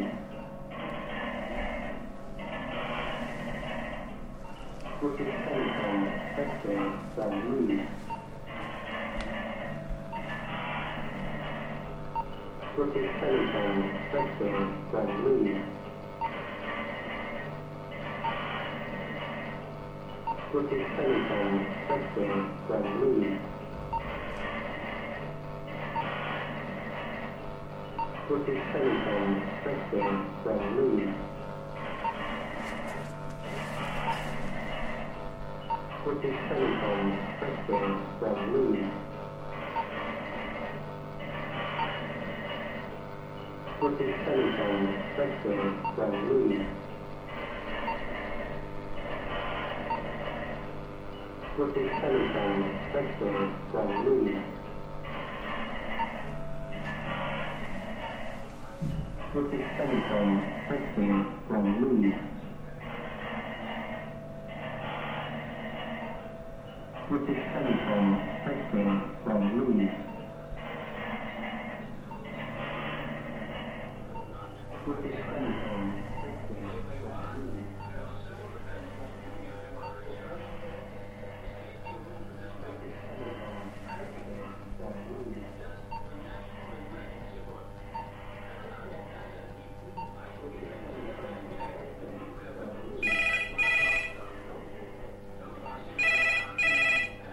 Kelvedon Hatch Secret Nuclear Bunker
Sounds of the museum inside of the former cold war bunker. Recorded June 3, 2008 while touring the bunker with Bernd Behr.